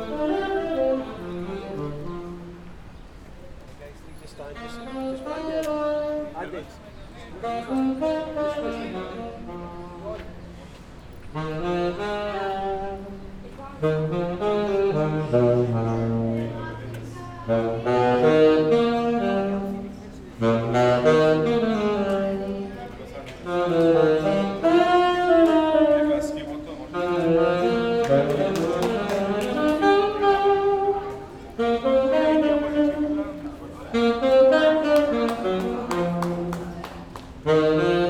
St Transverse, New York, NY, USA - Under the Denesmouth Arch
Saxophone player under Denesmouth Arch, Central Park.
6 July